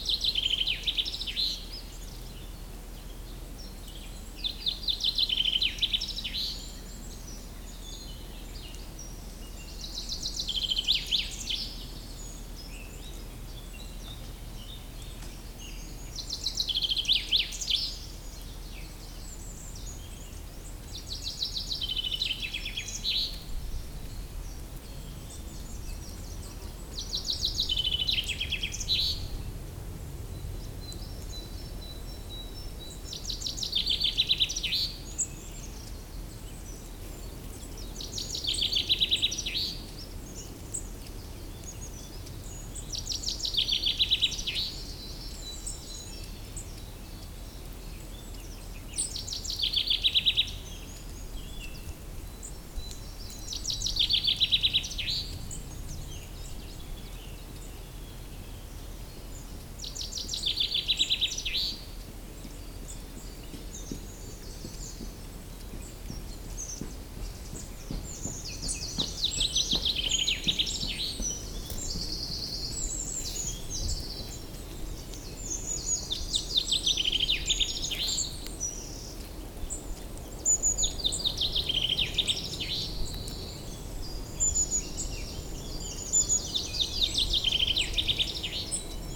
Genappe, Belgique - Common Chaffinch
The basic warbling of the Common Chaffinch in the woods.